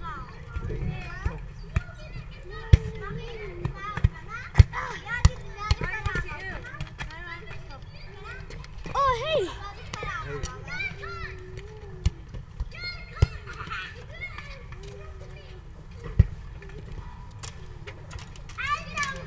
Fisksätra Allé - Samedi midi à l'air de jeu
Svealand, Sverige, 4 May 2013, 14:01